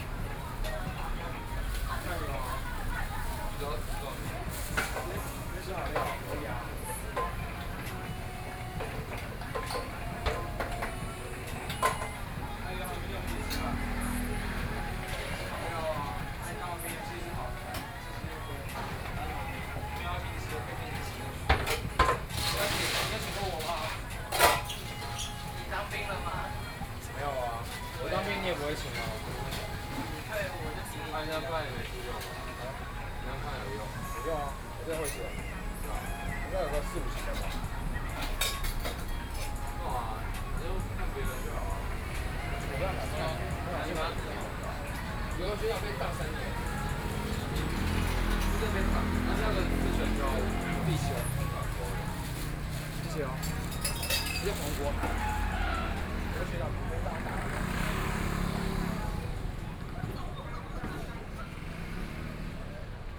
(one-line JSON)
{"title": "Beitou District, Taipei City - Ice drink shop", "date": "2014-04-17 21:13:00", "description": "Ice drink shop\nPlease turn up the volume a little. Binaural recordings, Sony PCM D100+ Soundman OKM II", "latitude": "25.13", "longitude": "121.50", "altitude": "11", "timezone": "Asia/Taipei"}